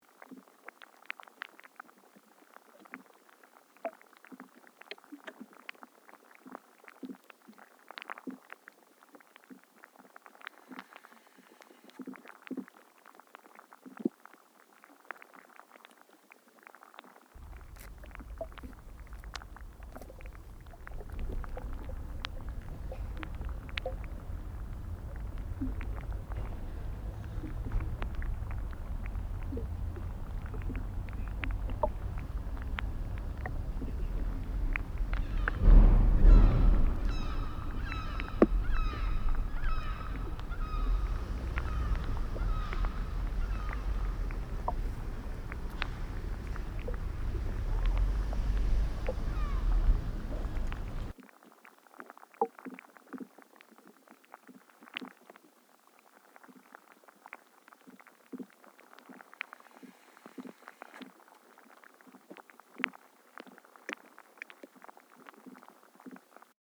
Kanaleneiland, Utrecht, The Netherlands - Hydro & seagull
hydrophones & stereo microphone